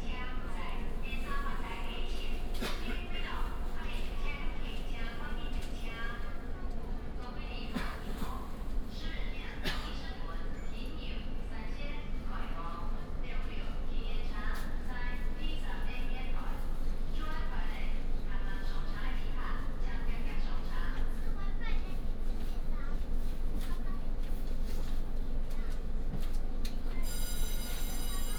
{
  "title": "Hsinchu City, Taiwan - Local Train",
  "date": "2017-01-16 11:21:00",
  "description": "from Hsinchu Station to Sanxingqiao Station",
  "latitude": "24.79",
  "longitude": "120.95",
  "altitude": "23",
  "timezone": "GMT+1"
}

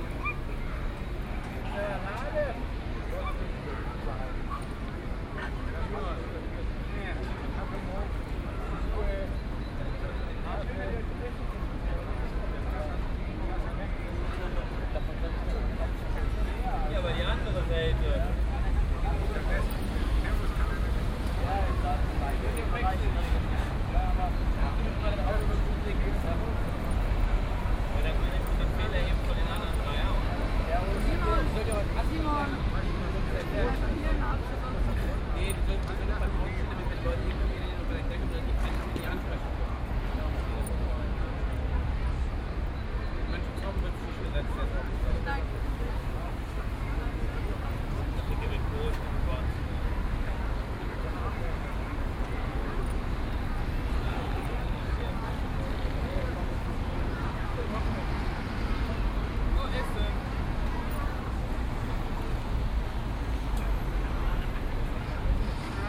{
  "title": "Löhrrondell, square, Koblenz, Deutschland - Löhrrondell 9",
  "date": "2017-05-20 11:57:00",
  "description": "Binaural recording of the square. Second day, a saturday, ninth of several recordings to describe the square acoustically. On a bench, children's day, homeless people discussing.",
  "latitude": "50.36",
  "longitude": "7.59",
  "altitude": "79",
  "timezone": "Europe/Berlin"
}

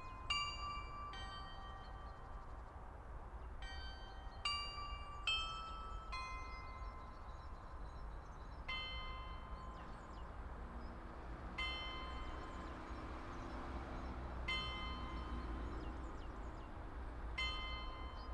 {
  "title": "Eil, Köln, Deutschland - Glockenspiel des Gestüts Röttgen / Carillon of the stud Röttgen",
  "date": "2014-02-18 17:00:00",
  "description": "Das Glockenspiel des Gestüts Röttgen. Es befindet sich etwa 70 m südöstlich vom Aufnahmepunkt. Das Gestüt liegt in der Einflugschneise des Flughafens Köln-Bonn. Im Hintergrund sind die Fahrzeuge der nahen Theodor-Heuss-Straße zu hören. Zwischen den vier Teilen des Glockenspiels sind Flugzeuge und Vögel zu hören. (Kennt jemand die erste Melodie nach dem Stundenläuten?)\nThe carillon of the stud Röttgen. It is located approximately 70 m southeast of the pick-up point. The stud is under the flight path of the airport Cologne-Bonn. In the background, the vehicles of the near-Theodor-Heuss-Straße can be heard. Between the four parts of the carillon aircrafts and birds could be heard. (Does anyone know the first tune after the hour striking?)",
  "latitude": "50.90",
  "longitude": "7.08",
  "timezone": "Europe/Berlin"
}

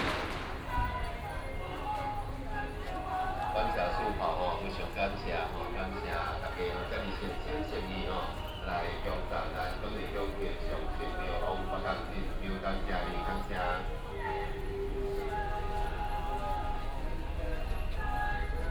{
  "title": "Zhongshan Rd., Shalu Dist., Taichung City - Firecrackers and fireworks",
  "date": "2017-02-27 10:04:00",
  "description": "Firecrackers and fireworks, Baishatun Matsu Pilgrimage Procession",
  "latitude": "24.24",
  "longitude": "120.56",
  "altitude": "13",
  "timezone": "Asia/Taipei"
}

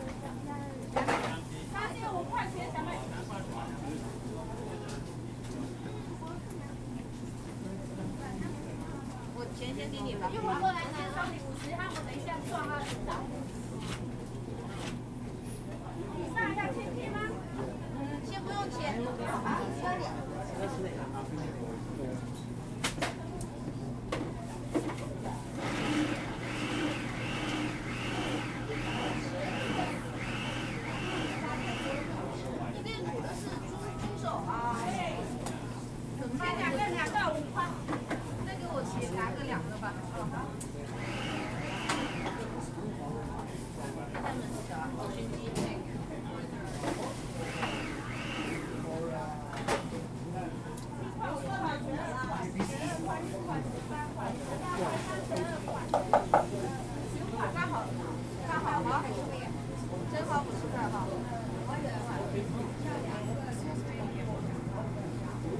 {
  "title": "Flushing, Queens, NY, USA - Seated At the Galaxy Dumpling Stand in Golden Mall",
  "date": "2017-03-04 11:40:00",
  "description": "Seated with other diners at one of the many underground dumpling stands in Golden Mall",
  "latitude": "40.76",
  "longitude": "-73.83",
  "altitude": "16",
  "timezone": "America/New_York"
}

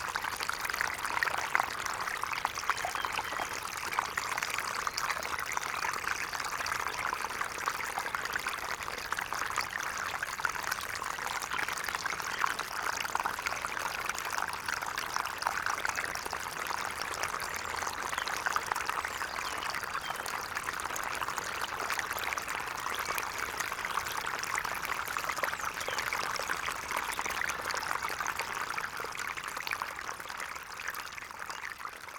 {
  "title": "Ton, Niedertiefenbach, Deutschland - source of a little creek",
  "date": "2016-03-28 18:10:00",
  "description": "little creek soon after its outflow from a pond. the whole area is wet, lots of surface water and puddles, a clay pit decades ago.\n(Sony PCM D50)",
  "latitude": "50.45",
  "longitude": "8.15",
  "altitude": "252",
  "timezone": "Europe/Berlin"
}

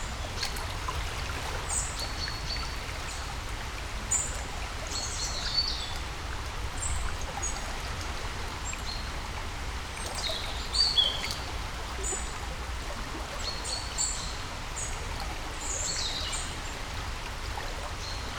{
  "title": "Brje, Dobravlje, Slovenia - River Vipava",
  "date": "2020-10-18 11:18:00",
  "description": "River Vipava and birds. Recorded with Lom Uši Pro.",
  "latitude": "45.87",
  "longitude": "13.80",
  "altitude": "70",
  "timezone": "Europe/Ljubljana"
}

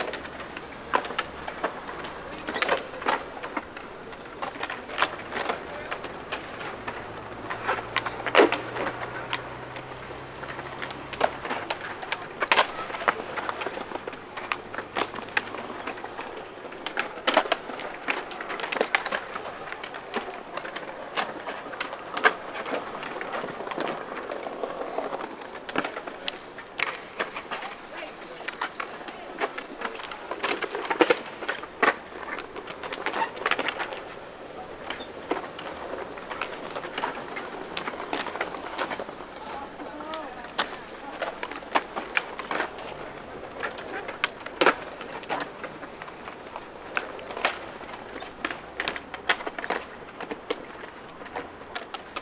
{
  "title": "casa da música, Boavista-Porto, skaters@casa da musica",
  "date": "2011-06-13 13:15:00",
  "description": "Skaters rolling in front of Casa da Música Building, Porto, shouts, traffic",
  "latitude": "41.16",
  "longitude": "-8.63",
  "altitude": "87",
  "timezone": "Europe/Lisbon"
}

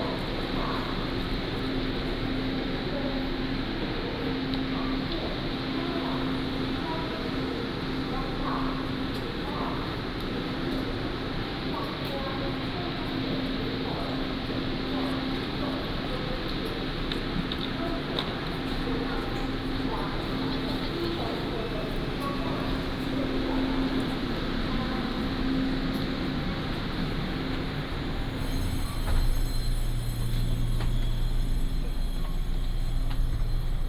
{
  "title": "Tainan Station, East Dist., Tainan City - Station Message Broadcast",
  "date": "2017-01-31 14:46:00",
  "description": "At the station platform, Station Message Broadcast",
  "latitude": "23.00",
  "longitude": "120.21",
  "altitude": "22",
  "timezone": "GMT+1"
}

